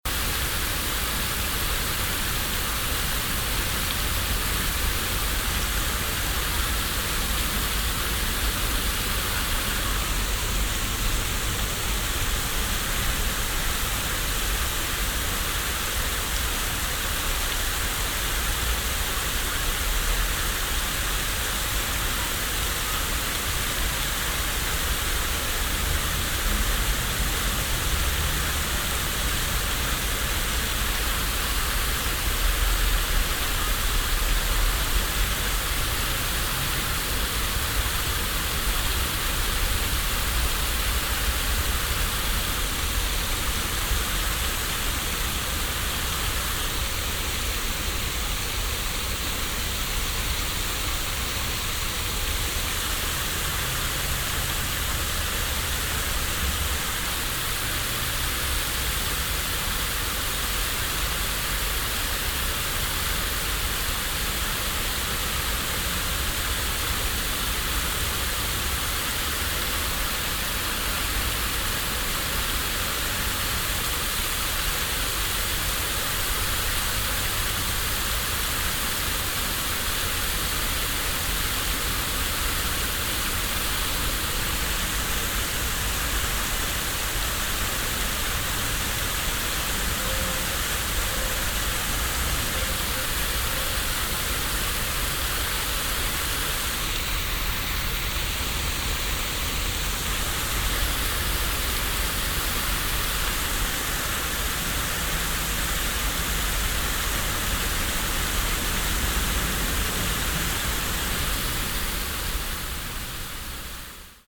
dresden, albertplatz, fountain at tram station
big classical fountain, with water circle to the centre
soundmap d: social ambiences/ in & outdoor topographic field recordings
16 June 2009, ~14:00